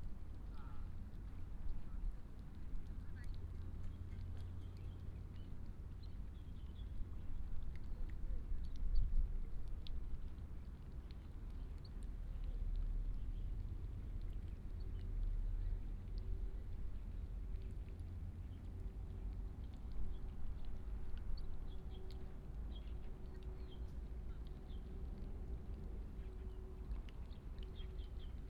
Small fishing port, Small fishing village, dog, bird, Binaural recordings, Sony PCM D100+ Soundman OKM II